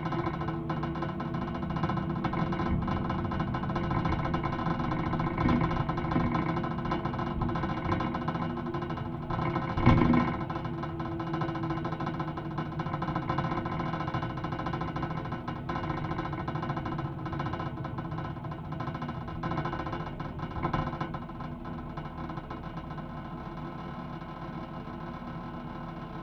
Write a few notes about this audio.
Bunch of rattling, JrF Contact Mic taped to city bus seat. Recorded to 633.